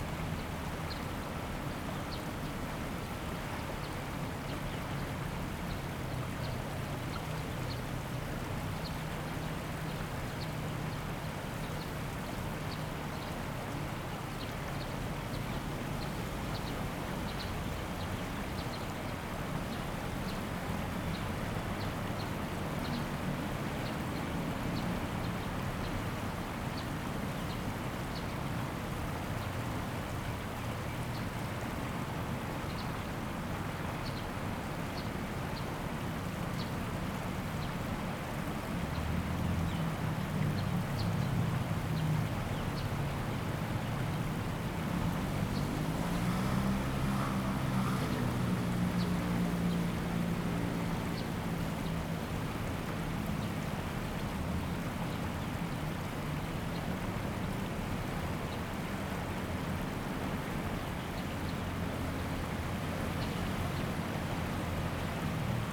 Liuchuan, Taichung City - In the middle of the river
Stream sound, Traffic Sound
Zoom H2n MS+XY
Taichung City, Taiwan, 6 September 2016